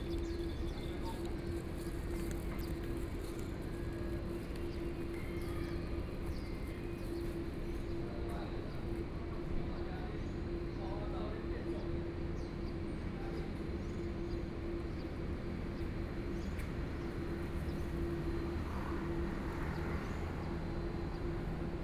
下埤公園, Taipei City - Sitting in the park
Near the airport noise, Traffic Sound, Dogs barking
Zhongshan District, Taipei City, Taiwan